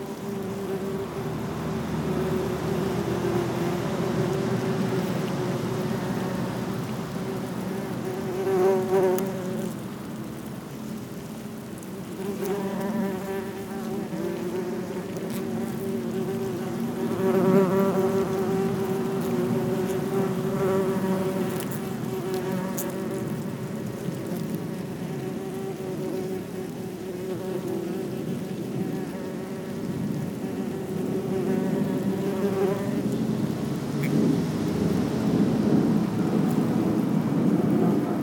Silwood Park, Ascot, UK - Wasps' nest
A wasps' nest in the base of a fallen tree, near a road, and under a Heathrow airport flight path. Recorded on a Sony PCM-M10 with Naiant stereo lavaliere mics lowered close to the nest.
31 August 2016, 11:30